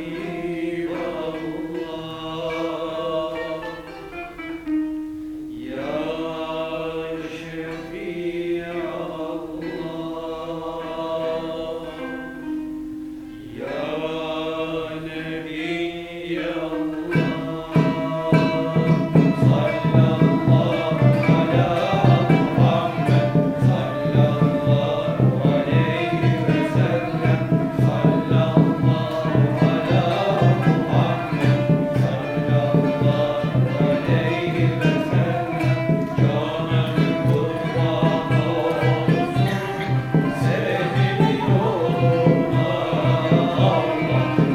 Istanbul, Muammer Karaca Theater, Sufi Group of Istanbul Galata Mevlevileri
Beyoğlu/Istanbul Province, Turkey, 8 October 2009, 14:48